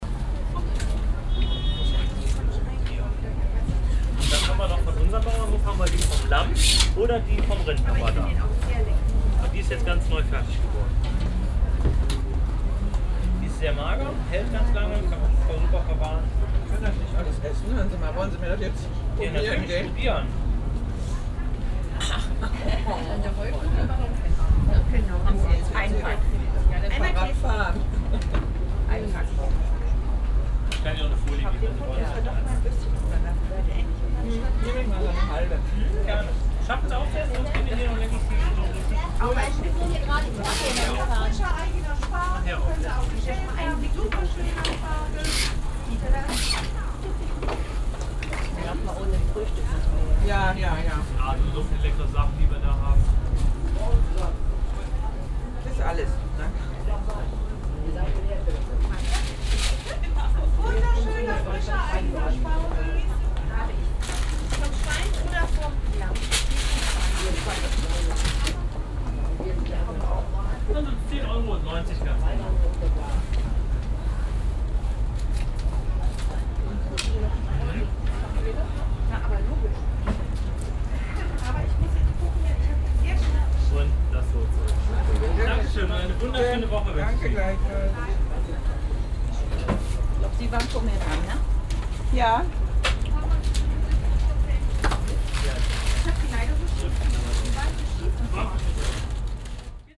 refrath, steinbreche, markplatz, stand fa flem
soundmap: köln/ nrw
mittags auf dem wochenmarkt - stand der familie flem
project: social ambiences/ listen to the people - in & outdoor nearfield recording